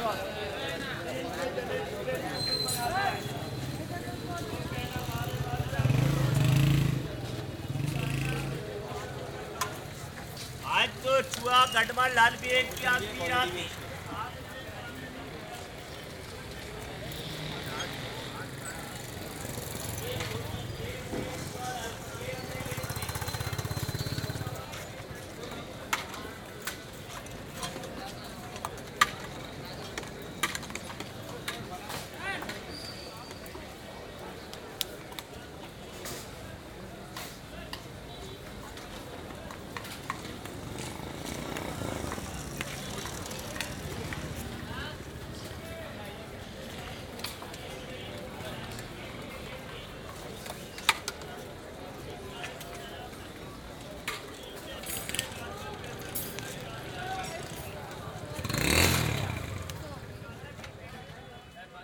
Gulshan-e-Iqbal, Karachi, Pakistan - Market seller with mobile PA
Looking for electronics in the electronics souq. A market seller with a small portable PA system is selling some kind of polish. In the background another seller is chopping ice for drinks.
Recorded with OKM Binaurals into a Zoom H4N
8 October 2015